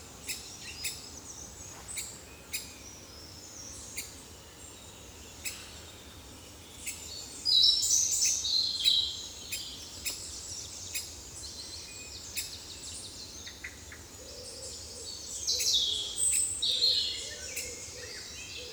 {"title": "Thuin, Belgique - Birds in the forest", "date": "2018-06-03 09:40:00", "description": "Anxious Great Spotted Woodpecker, lot of juvenile Great Tit, juvenile Eurasian Blue Tit, Robin, blackbird. 10:50 mn, it's a small fight.", "latitude": "50.37", "longitude": "4.34", "altitude": "187", "timezone": "Europe/Brussels"}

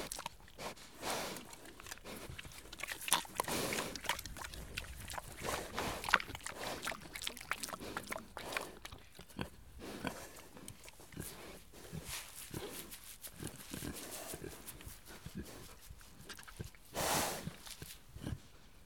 {"title": "Atherington, UK - Pigs grunt, sniff, eat", "date": "2016-11-27 12:08:00", "description": "Two Kunekune pigs run up grunting and are satiated with snacks.\nRecorded w/ Zoom H4n internal mics", "latitude": "51.00", "longitude": "-4.02", "altitude": "102", "timezone": "GMT+1"}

